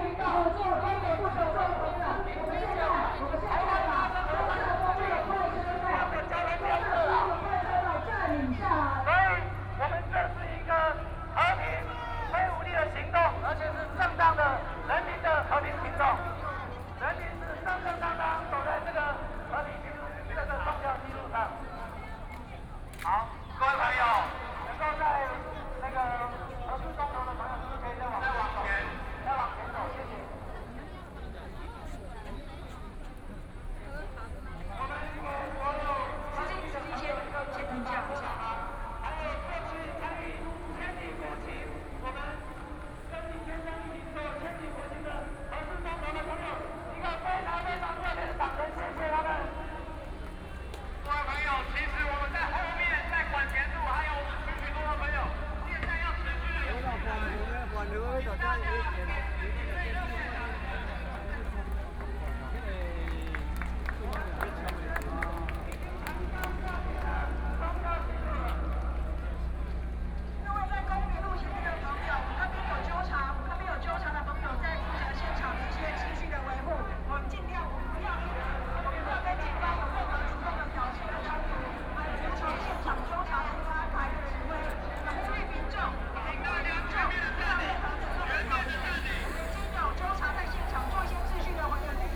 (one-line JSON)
{"title": "Zhongxiao W. Rd., Taipei City - Road corner", "date": "2014-04-27 16:08:00", "description": "Protest actions are expected to be paralyzed major traffic roads, Opposition to nuclear power, Protest\nSony PCM D50+ Soundman OKM II", "latitude": "25.05", "longitude": "121.52", "altitude": "14", "timezone": "Asia/Taipei"}